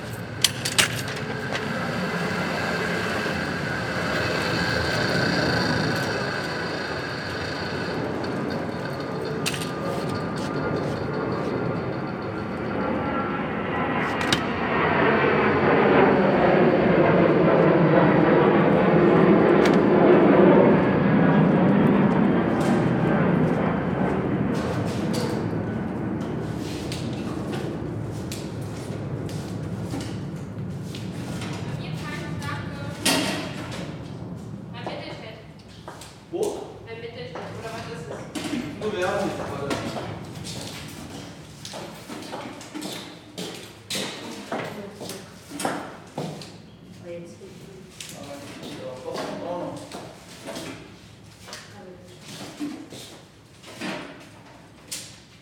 Zechliner Straße, Soldiner Kiez, Wedding, Berlin, Deutschland - Zechliner Straße, Berlin - Following the postman
Mit dem Briefträger unterwegs.
Berlin, Germany, 10 October 2012